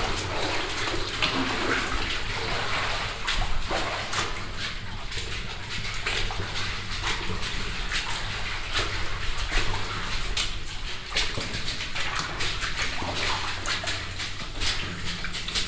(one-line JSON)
{"title": "stolzembourg, old copper mine, drift walk", "date": "2011-08-09 22:38:00", "description": "Walking inside the low tunnel of the copper mine. stepping through ankle high water, occassionaly hitting the helmet against the stone ceiling.\nStolzemburg, alte Kupfermine, Weg\nGang in den unteren Tunnel der Kupfermine. Stapfen durch knöcheltiefes Wasser, von Zeit zu Zeit schlägt der Schutzhelm gegen die Steindecke.\nStolzembourg, ancienne mine de cuivre, promenade dans la galerie\nEn marche dans le bas tunnel de la mine de cuivre, les pas à travers des flaques qui montent jusqu’aux chevilles, de temps en temps le casque qui cogne contre le plafond en pierre.\nProject - Klangraum Our - topographic field recordings, sound objects and social ambiences", "latitude": "49.97", "longitude": "6.16", "altitude": "284", "timezone": "Europe/Luxembourg"}